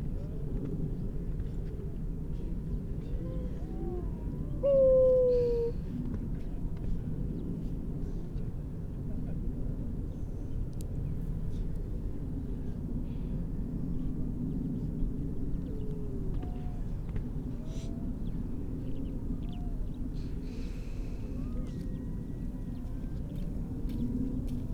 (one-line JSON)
{"title": "Unnamed Road, Louth, UK - grey seals soundscape ...", "date": "2019-12-03 10:14:00", "description": "grey seals soundscape ... generally females and pups ... parabolic ... bird calls ... skylark ... crow ... redshank ... pied wagtail ... linnet ... starling ... pink-footed geese ... all sorts of background noise ...", "latitude": "53.48", "longitude": "0.15", "altitude": "1", "timezone": "Europe/London"}